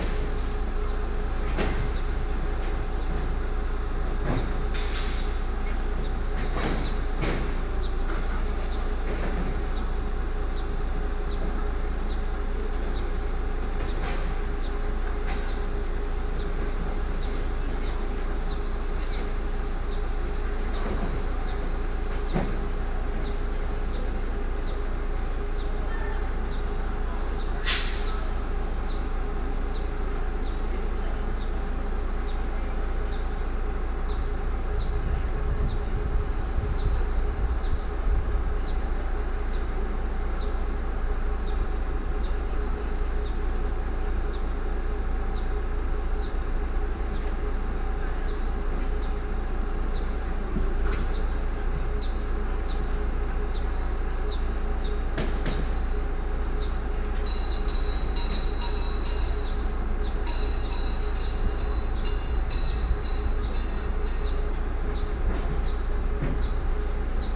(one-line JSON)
{"title": "Beitou, Taipei - Construction", "date": "2013-07-01 15:01:00", "description": "Construction, Sony PCM D50 + Soundman OKM II", "latitude": "25.14", "longitude": "121.49", "altitude": "23", "timezone": "Asia/Taipei"}